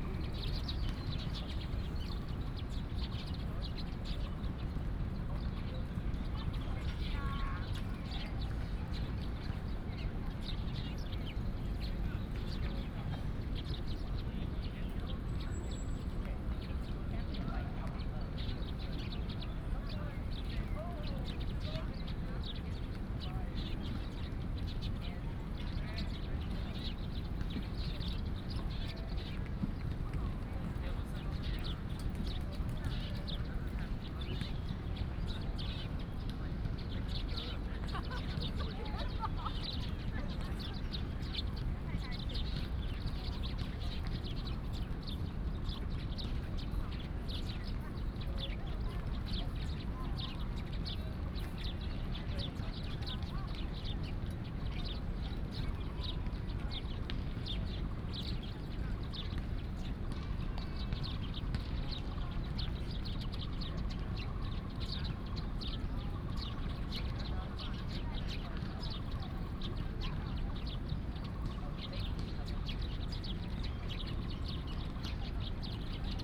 台大醉月湖, Da’an Dist., Taipei City - Many sparrows
At the university, Bird sounds, Goose calls, Many sparrows